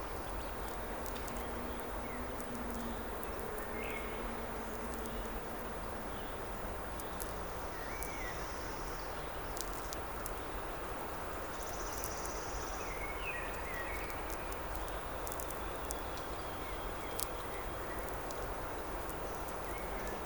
Utena, Lithuania, electro-sonic forest
electro-sonic atmospheres in a forest. captured with conventional microphones and electromagnetic listening antenna Priezor